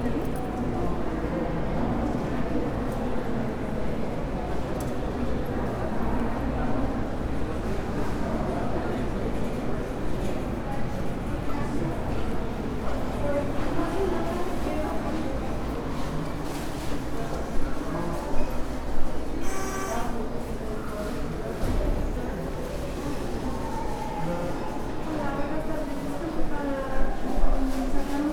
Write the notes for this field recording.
City Hall. Leon, Guanajuato. Mexico. I made this recording on july 25th, 2022, at 1:56 p.m. I used a Tascam DR-05X with its built-in microphones and a Tascam WS-11 windshield. Original Recording: Type: Stereo, Esta grabación la hice el 25 de julio 2022 a las 13:56 horas.